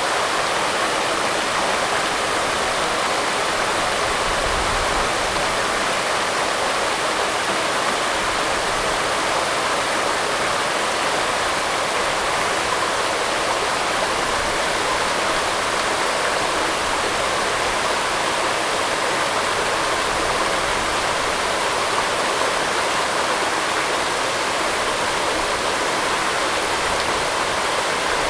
五峰旗瀑布, Jiaoxi Township, Yilan County - Waterfalls and Stream
Waterfalls and rivers
Zoom H2n MS+ XY
Jiaoxi Township, Yilan County, Taiwan, 7 December 2016, 10:54